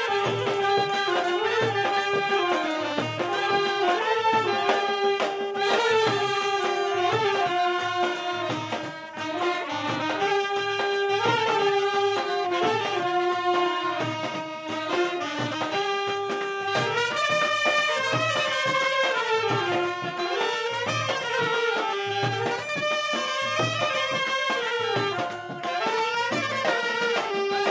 Shahid Bhagat Singh Marg, near Bata, Cusrow Baug, Apollo Bandar, Colaba, Mumbai, Maharashtra, Inde - Collaba Market
Collaba Market
Fanfare - ambiance
Mumbai Suburban, Maharashtra, India, 12 December 2002